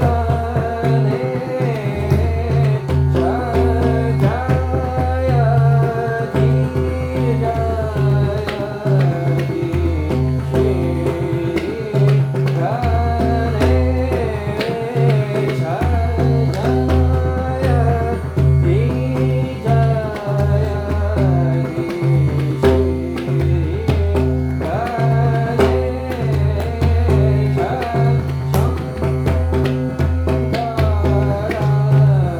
Le Village, Brénaz, France - 2019-05-25 raga de la nuit
raga de la nuit, avec mohan shyam